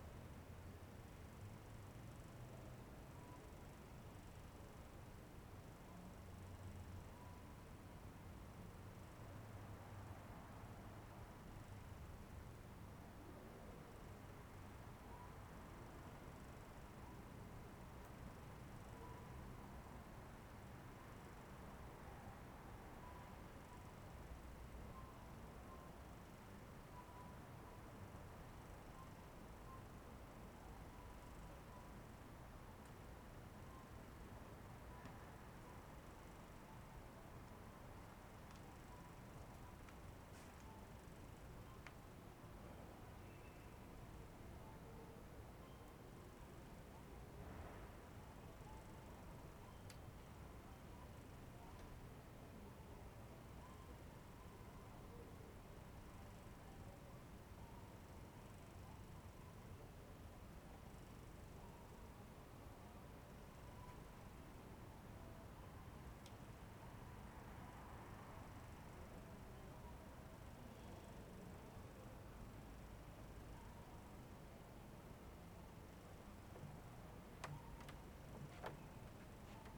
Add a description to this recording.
"Round midnight with sequencer and LOL in background in the time of COVID19" Soundscape, Chapter XCI of Ascolto il tuo cuore, città. I listen to your heart, city, Friday, May 29th 2020, eighty days after (but day twenty-six of Phase II and day thirteen of Phase IIB and day seven of Phase IIC) of emergency disposition due to the epidemic of COVID19. Start at 11:57 p.m. end at 00:01 a.m. duration of recording 33’42”